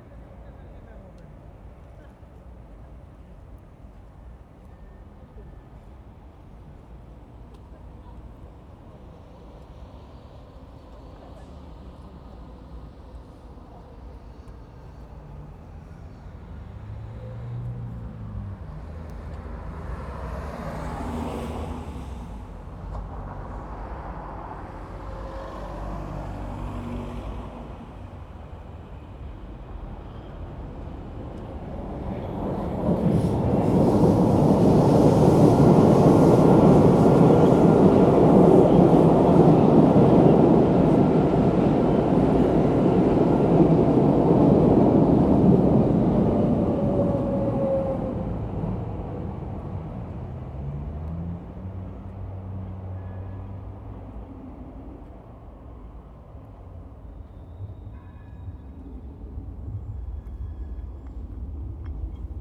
Sint-Jans-Molenbeek, Belgium - Verheydenstr walk1 close siren, trains
Start of a walk along exploring the Verheyden street to Jacque Brel station soundscape. In this place I'm leaning against a wall with the sounds of the Weststation mid distance. A close siren (ambulance) passes by and 2 train. A tram faintly tings.
Anderlecht, Belgium